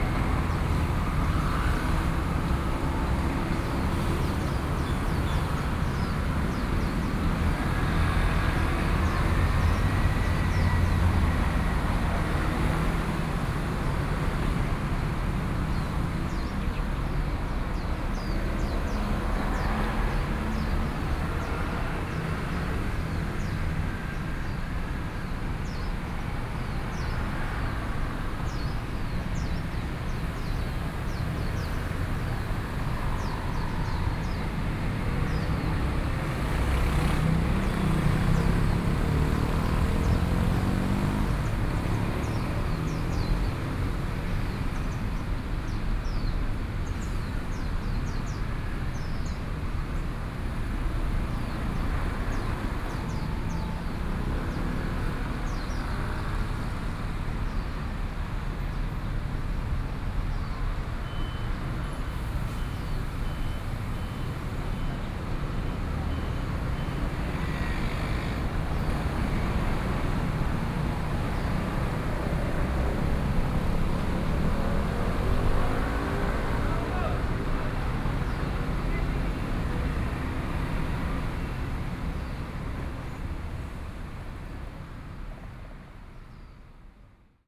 Park entrance, birds song, traffic noise, Sony PCM D50 + Soundman OKM II